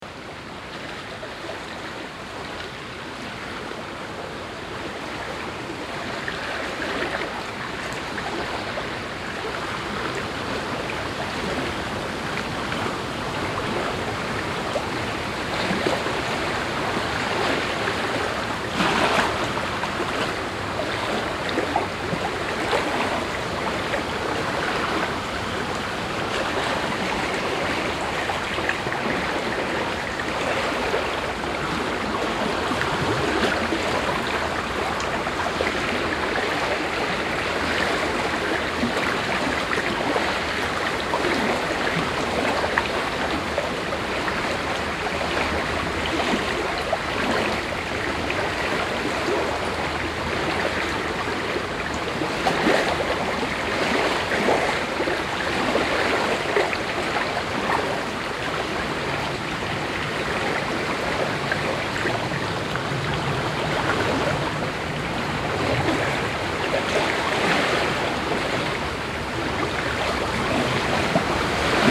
Am Ufer des nach nächtlichem Regens gut gefüllten und aufgewühlten Grenzflusses Our.
At the riverside of the border river Our that is well filled with ruffled water after a rainy night.